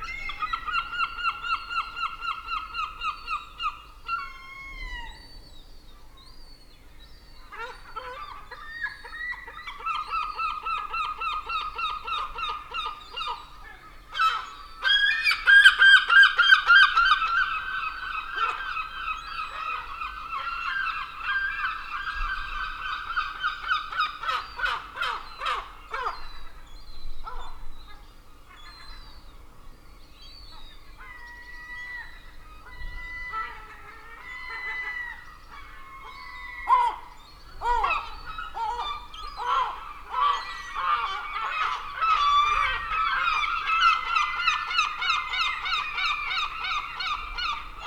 At 2am the gulls take over, robbing waste bins and combing every inch for food scraps left by holidaymakers of the day.
I realised too late that my fur covered lavaliers might look like a tasty treat to a hungry gull but luckily they survived.
Watch out for the volume peaks if you are listening with headphones.
England, United Kingdom, 13 July 2021, 02:00